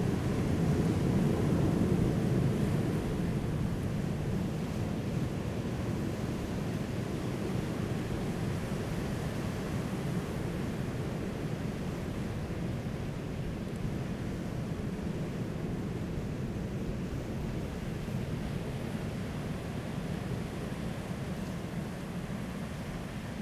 興昌村, Donghe Township - Sound of the waves
At the seaside, Sound of the waves, Very hot weather
Zoom H2n MS+ XY